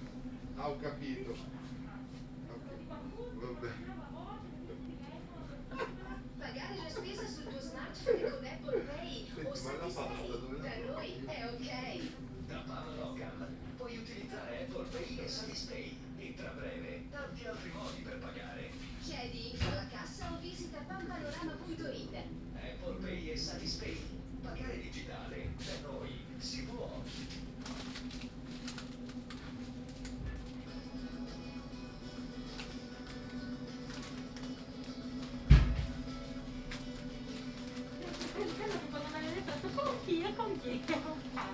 Ascolto il tuo cuore, città. I listen to your heart, city. Chapter V - Supermercato serale tre mesi dopo ai tempi del COVID19 Soundwalk
"Supermercato serale tre mesi dopo ai tempi del COVID19" Soundwalk
Chapter CVI of Ascolto il tuo cuore, città. I listen to your heart, city
Saturday, June 13th 2020. Walking with shopping in San Salvario district, Turin ninety-five days after (but day forty-one of Phase II and day twenty-ight of Phase IIB and day twenty-two of Phase IIC) of emergency disposition due to the epidemic of COVID19.
Start at 8:21 p.m. end at #:00 p.m. duration of recording ##'42''
The entire path is associated with a synchronized GPS track recorded in the (kml, gpx, kmz) files downloadable here: